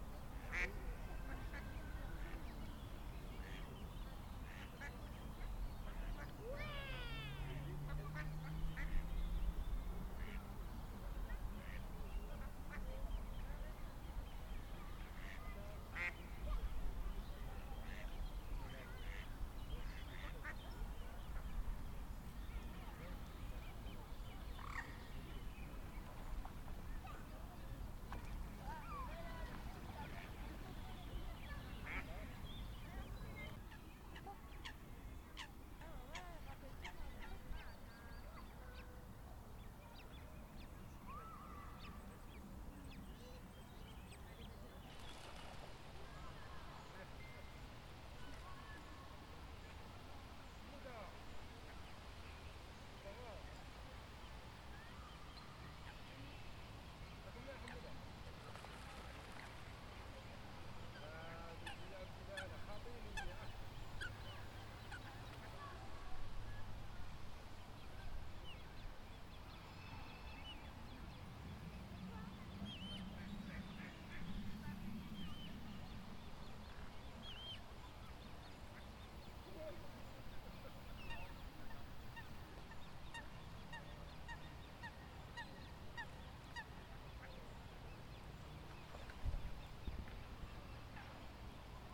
Human and bird families meet and call and response: ducks, swans, Moorhens, herons, men, women, children. Record with Shure VP 88 and Tascam DR-40. For World Listening Day 2020.
Hauts-de-France, France métropolitaine, France, 18 July 2020